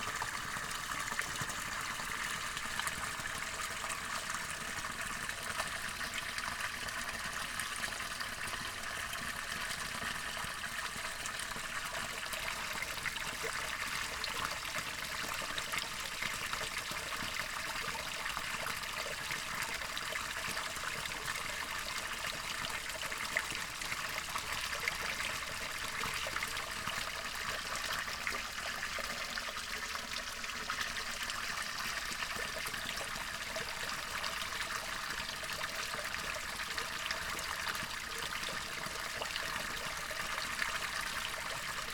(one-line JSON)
{"title": "Eschenau, Kerkerbachtal - water flow, former iron ore mine", "date": "2022-02-07 14:30:00", "description": "Seeking shelter from rain, in the remains of an old iron ore mine. The inscription says: \"Eisensegen 1937\", but in fact mining here and in the whole area started already in the 18th century. Clear water flows out of a tube, some people come here daily to fill their bottles and canisters for drinking water.\n(Sony PCM D50, Primo EM272)", "latitude": "50.44", "longitude": "8.18", "altitude": "184", "timezone": "Europe/Berlin"}